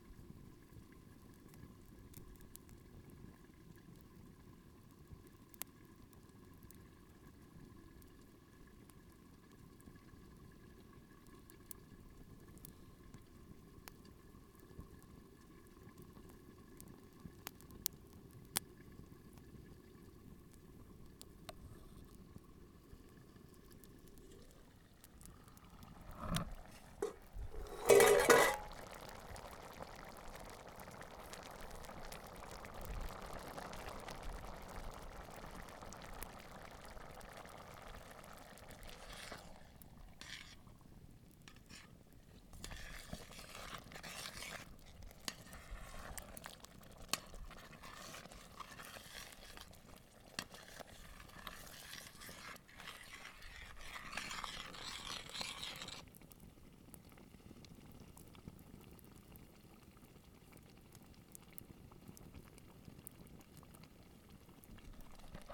Trachoulas Beach, Gortina, Greece - Cooking with Fire
You can listen to the fire and the water boiling. We were cooking lentils..